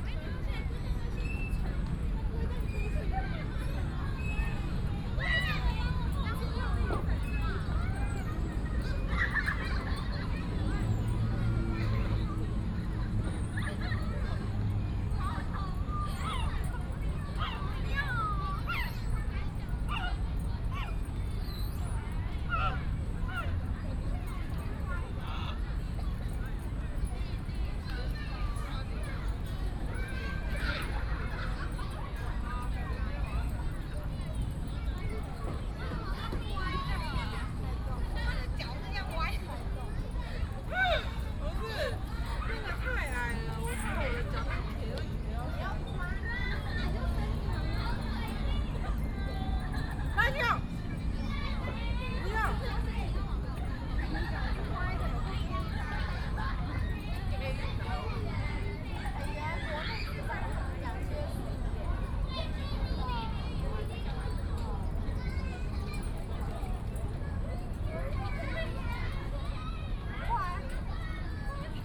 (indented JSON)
{
  "title": "Daan Forest Park, 大安區 - Children Playground",
  "date": "2015-06-26 21:37:00",
  "description": "Children Playground, in the park",
  "latitude": "25.03",
  "longitude": "121.54",
  "altitude": "16",
  "timezone": "Asia/Taipei"
}